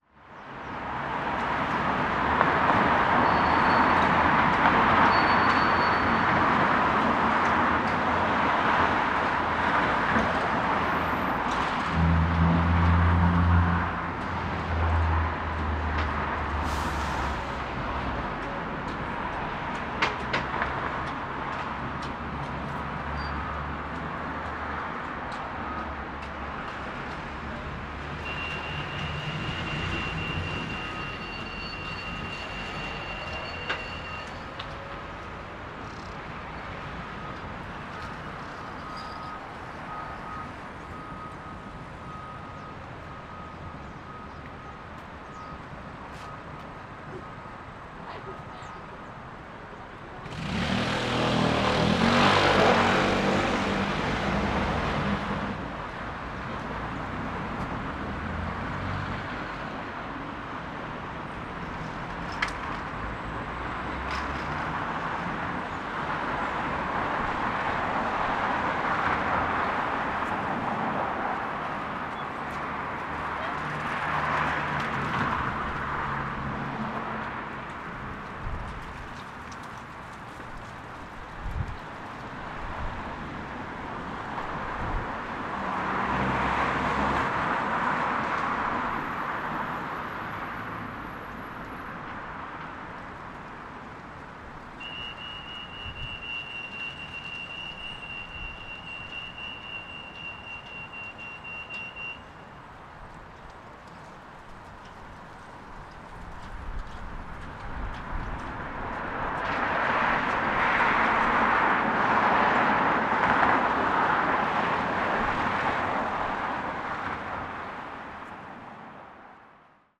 Queens University, Belfast, UK - Queens University Belfast
Recording in front of the local university, space is calmer, windy, and less commotion in the area. This is five days after the new Lockdown 2 in Belfast started.
Northern Ireland, United Kingdom, 21 October, 19:02